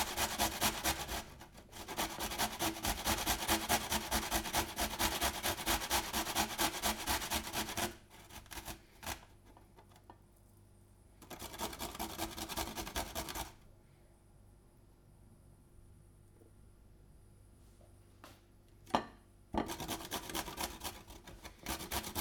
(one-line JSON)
{"title": "Poznan, Mateckiego street, kitchen - carrot grating", "date": "2012-08-26 20:10:00", "description": "grating carrots for a cake", "latitude": "52.46", "longitude": "16.90", "altitude": "97", "timezone": "Europe/Warsaw"}